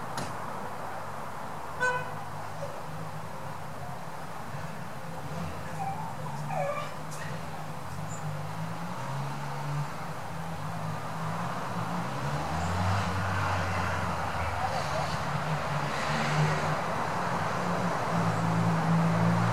Fonsorbes, France - Garden sounds in peri-urban areas
Between road and gardens the sounds of my district in the twilight